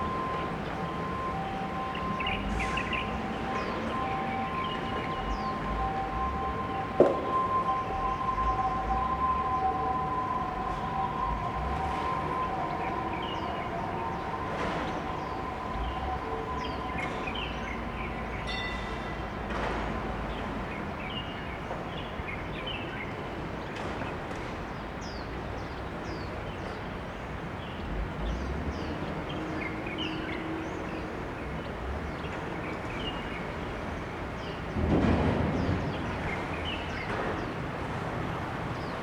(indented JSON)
{
  "title": "Liancheng Rd., Zhonghe Dist., New Taipei City - Beside the river",
  "date": "2012-02-14 16:55:00",
  "description": "Beside the river, Traffic Sound, Birds singing, The sound of the construction site\nSony Hi-MD MZ-RH1 +Sony ECM-MS907",
  "latitude": "25.00",
  "longitude": "121.49",
  "altitude": "12",
  "timezone": "Asia/Taipei"
}